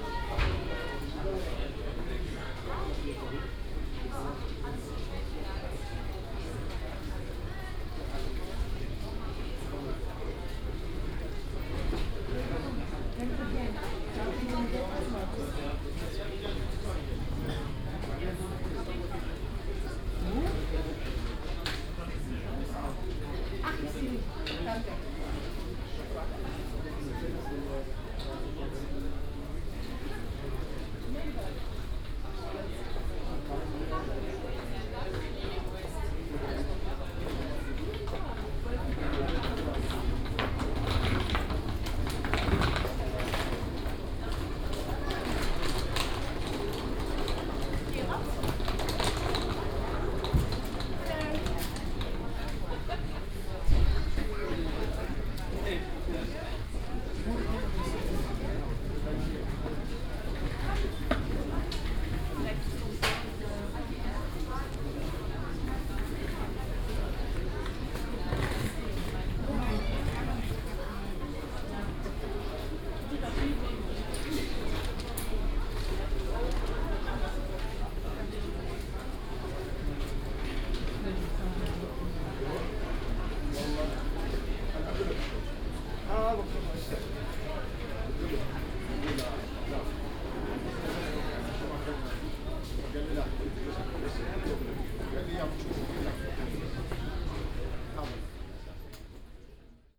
Berlin, Westend, Zentraler Omnibusbahnhof Berlin - waiting hall
ambience of the crowded waiting hall of ZOB. People of many nationalities getting their tickets, checking in, waiting for their bus to arrive or maybe just sitting there having nowhere to go on this frosty evening.
Berlin, Germany, December 2014